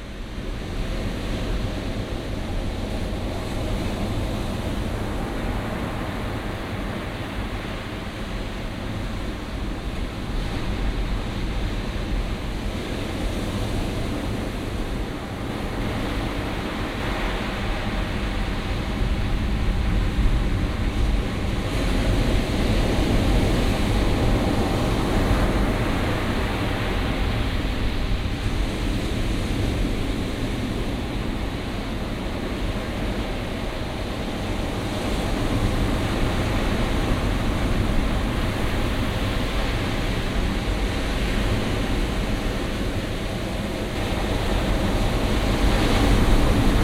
sound of the atlantic ocean, solitude bay at cabo de finisterre, which was assumed to be the most western place of the world. wikipedia knows:
In the area there are many pre-Christian beliefs and sacred locations. There was an Altar Soli on Cape Finisterre, where the Celts engaged in sun worship and assorted rituals.
Greco-Roman historians called the local residents of Cape Finisterre the Nerios. Monte Facho was the place were the Celtic Nerios from Duio carried out their offerings and rites in honor of the sun. Monte Facho is the site of current archaeological investigations and there is evidence of habitation on Monte Facho circa 1000 BCE. There is a Roman Road to the top of Monte Facho and the remnants of ancient structures on the mountain.
recording made end october 2002, few days before the oil tanker prestige crashed 10 miles offshore from this point, causing a huge ecologic disaster in the whole nothern spain.
Fisterra, Spanien, 20 October